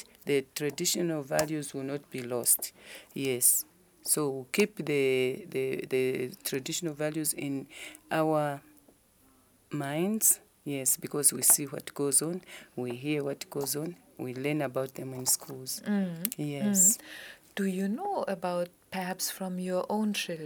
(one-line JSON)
{"title": "Mass Media Centre, ZNBC, Lusaka, Zambia - We are in the audio archives...", "date": "2012-07-19 15:29:00", "description": "These recordings picture a visit to the audio archives of the Zambia National Broadcasting Corporation ZNBC. Mrs. Namunkolo Lungu from the audio and visual sales office introduces the ZNBC project of documenting the annual traditional ceremonies in all the provinces of the country, which has been running over 15 years. She talks about her work between the archive, the broadcasters, outreach and sales, describes some of the ceremonies, and adds from her personal cultural practices and experiences.\nThe entire playlist of recordings from ZNBC audio archives can be found at:", "latitude": "-15.41", "longitude": "28.32", "altitude": "1267", "timezone": "Africa/Lusaka"}